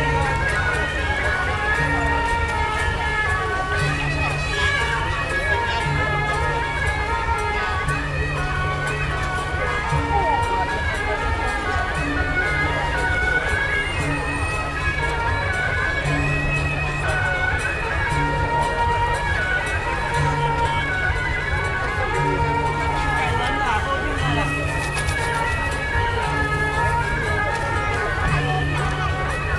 Ketagalan Boulevard, Taipei - Occasions on Election-related Activities
Ketagalan Boulevard, Occasions on Election-related Activities, Rode NT4+Zoom H4n